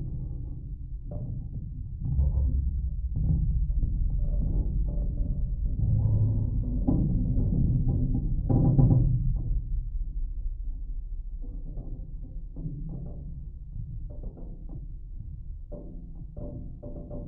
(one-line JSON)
{"title": "Dubingiai, Lithuania, temporary passengers bridge", "date": "2020-05-23 17:10:00", "description": "the main wooden Dubingiai bridge is under reconstruction, so here is built temporary pontoon bridge. geophone on support wire of pontoon, low frequencies", "latitude": "55.06", "longitude": "25.44", "altitude": "142", "timezone": "Europe/Vilnius"}